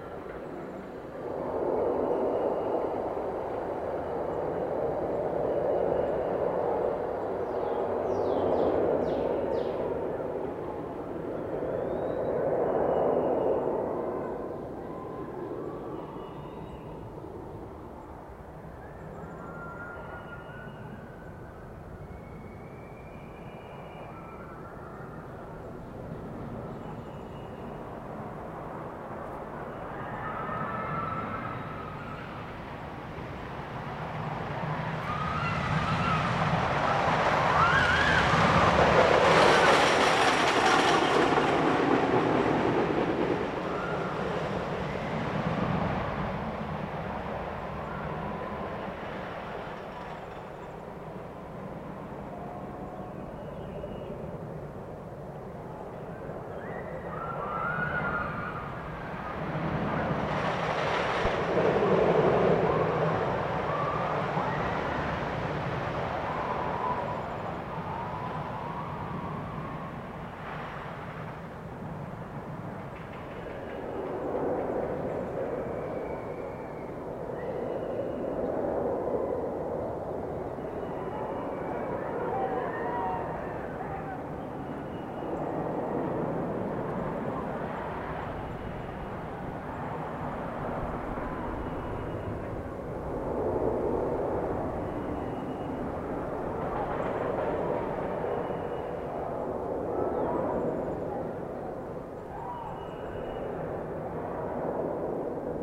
In honor of the 50th anniversary of Six Flags St. Louis, I made multiple recordings in the woods of sounds from the amusement park as I descended the hill to the park from the Rockwoods Towersite off Allenton Road. This was the closest recording of the Screamin' Eagle wooden roller coaster.
Saint Louis County, Missouri, United States, May 2021